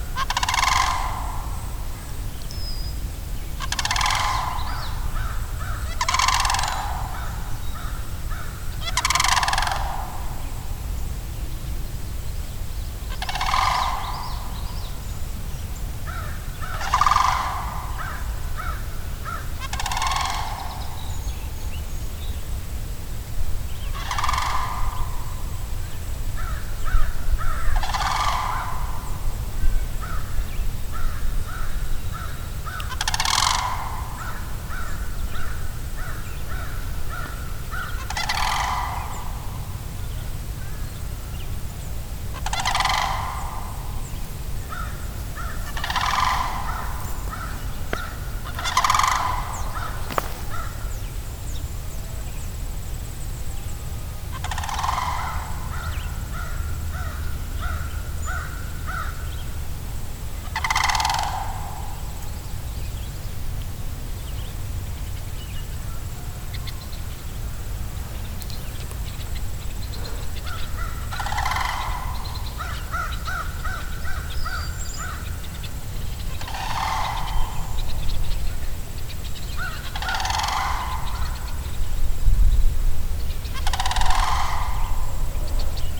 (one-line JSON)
{"title": "Grass Lake Sanctuary - Sandhill Cranes", "date": "2010-07-18 03:25:00", "description": "The call of a sandhill crane as it walked with its partner while eating cut grass at Grass Lake Sanctuary.\nWLD, Grass Lake Sanctuary, phonography, birds, sandhill cranes, Tom Mansell", "latitude": "42.24", "longitude": "-84.07", "altitude": "293", "timezone": "America/Detroit"}